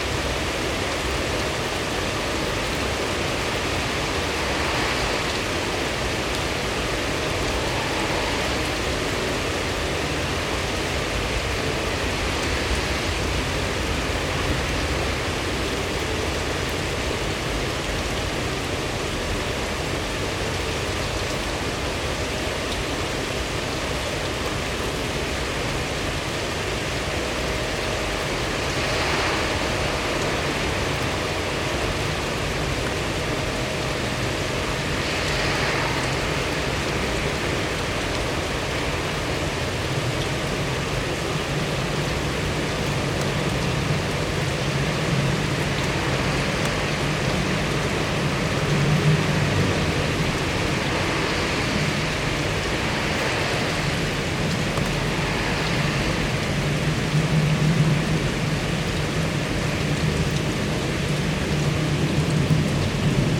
Rain-dry transition. In this recording, you can listen to a few cars and airplanes passing by and a couple of thunders. When the rain stops, someone starts to sweep the floor of their backyard.
Recorded with parabolic mic Dodotronic.
Zuid-Holland, Nederland, 26 July 2021, 19:00